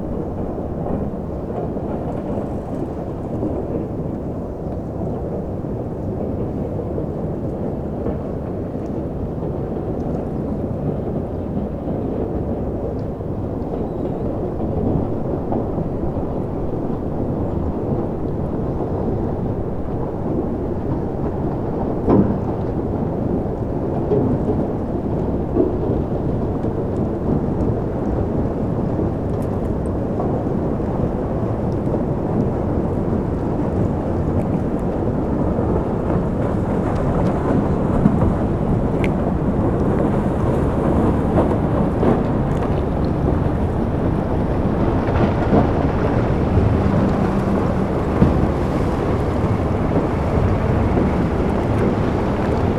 berlin, plänterwald: spreeufer, steg - the city, the country & me: icebreaker, coal barges
icebreaker opens a channel through the ice, coal barges on their way to the nearby power plant, cracking ice-sheets
the city, the country & me: february 12, 2012
Berlin, Germany